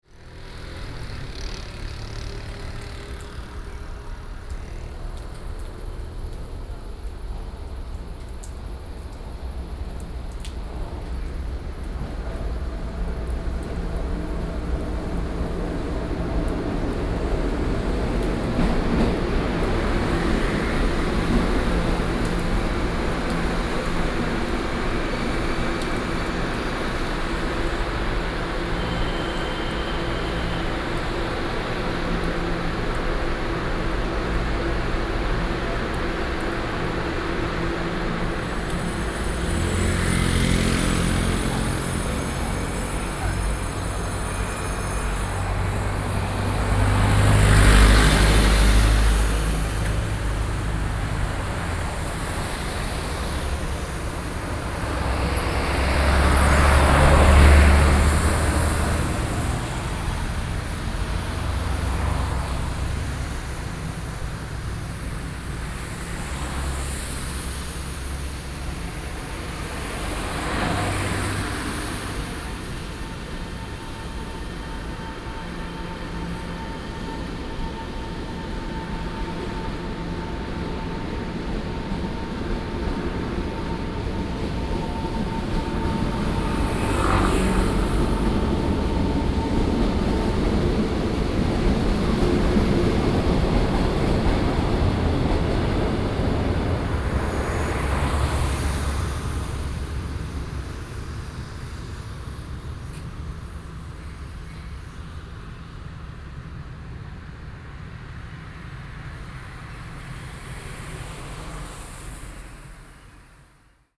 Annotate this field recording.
Traveling by train, Standing beside the railway track, Traffic Sound, Sony PCM D50+ Soundman OKM II